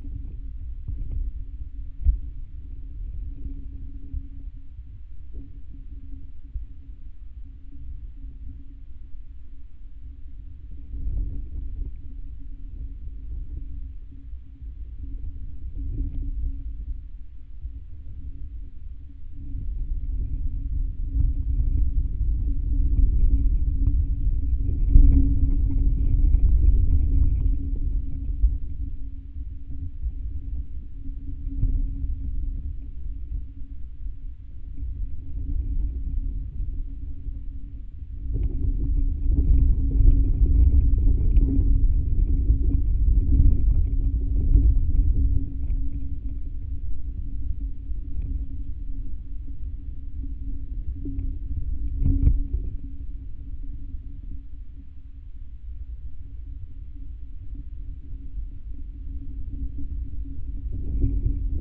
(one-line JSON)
{"title": "Vyžuonos, Lithuania, on swamped lakeshore", "date": "2022-08-20 15:10:00", "description": "Geophone sticked into swamped, slowly moving up and down, lakeshore.", "latitude": "55.59", "longitude": "25.51", "altitude": "109", "timezone": "Europe/Vilnius"}